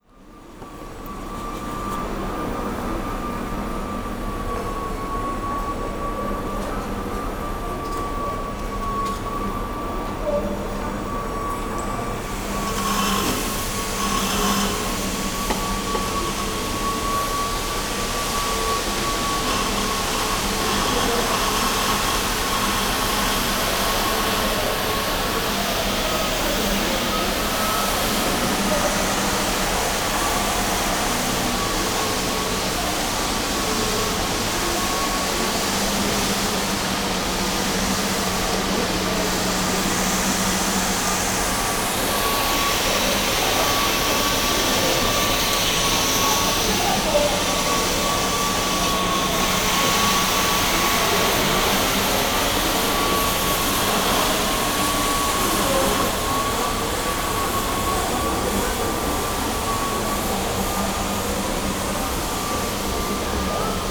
Japonia, Chiba-ken, Narita-shi, 成田国際空港 Terminal - floor polishing
cleaning crew is washing and polishing the floor in the terminal. (roland r-07)
6 October 2018, ~23:00